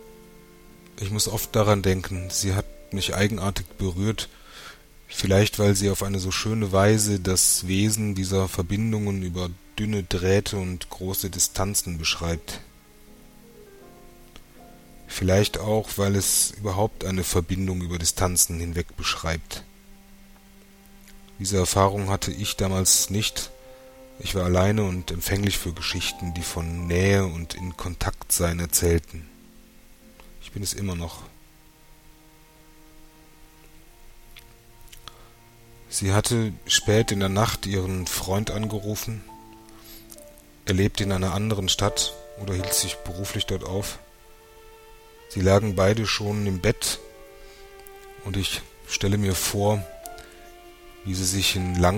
Pias Geschichte - P.s Geschichte